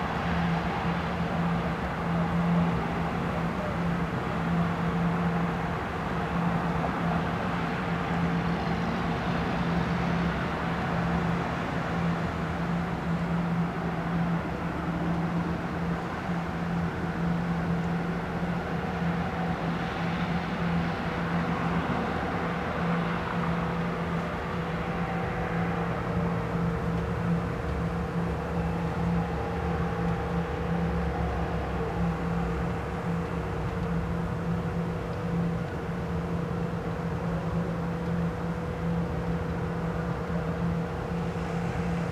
Maribor, Slovenia - city night ambience 7th floor
city heard at an open window, 7th floor of Maribor Hotel City. some drones and tones from unclear sources.
(PCM D-50)